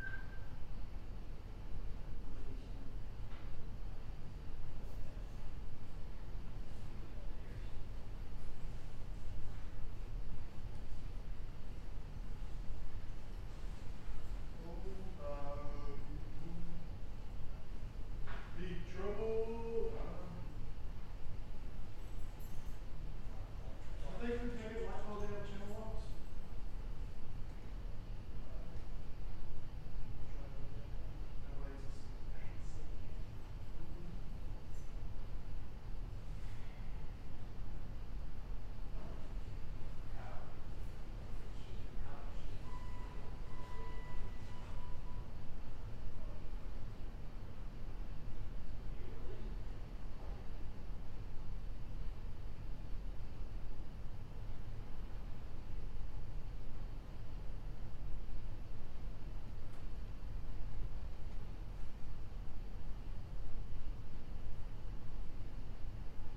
Recording of the HVAC inside a university office
Davidson County, Tennessee, United States